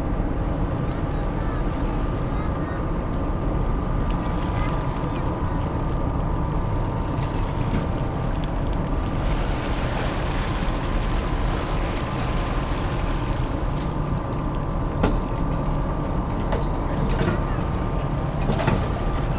Port de Plaisance des Sablons, Saint-Malo, France - Arrival of the ferry at the Saint-Malo seaport
Arrival of the ferry at the Saint-Malo seaport
Nice weather, sunny, no wind, calm and quiet sea.
Recorded from the jetty with a H4n in stereo mode.
Motors from the ferry.
Machines from the ramp for passengers.
People passing by, adults and kids talking.
Ramp for passengers